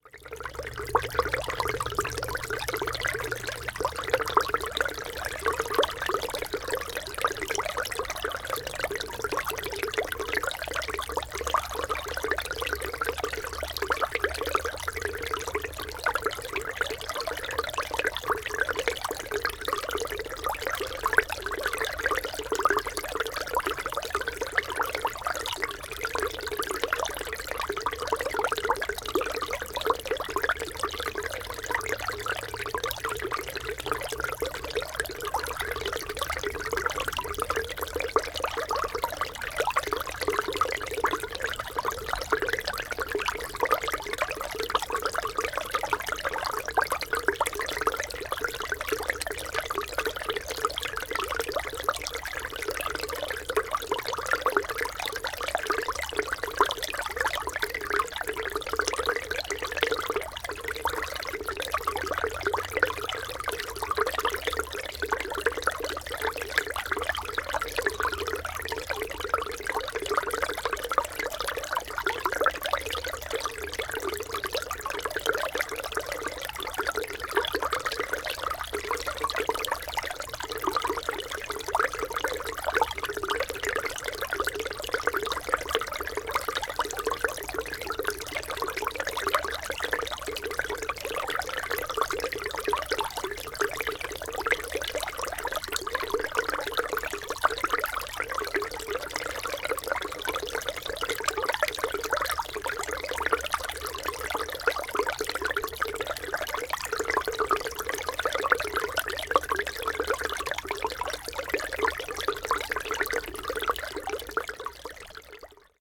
Poznan, near Deszczowa Rd. - robotic stream
a stream yet sounds like a quickened robotic talk